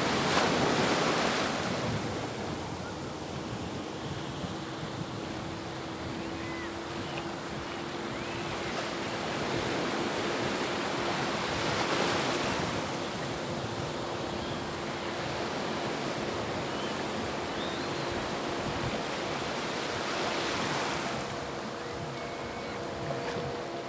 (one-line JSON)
{"title": "Necoclí, Antioquia, Colombia - Deriva sonora en las playas de Necoclí", "date": "2014-12-11 11:52:00", "description": "A soundwalk around one of Necocli's beaches.\nThe record was taken during the month of December 2014 on a trip around the Urabá region, Colombia.", "latitude": "8.59", "longitude": "-76.89", "altitude": "3", "timezone": "America/Bogota"}